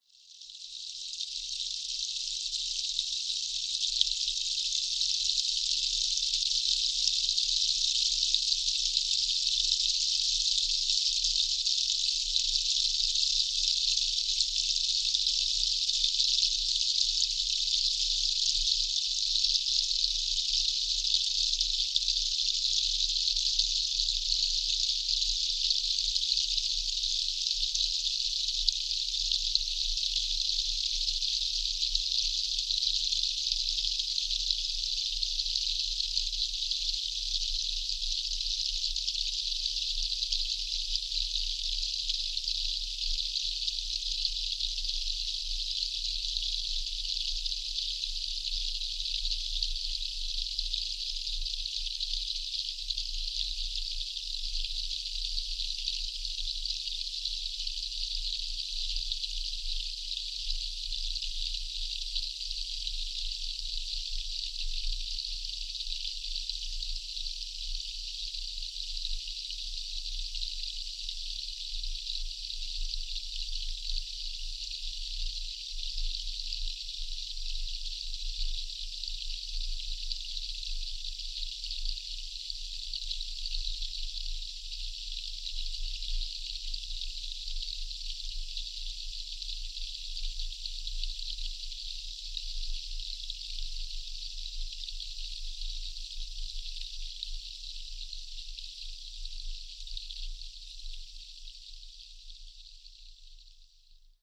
Blackland, Austin, TX, USA - Filling up the sink
Testing a pair of JrF D-series hyrdrophones on a Marantz PMD661
1 December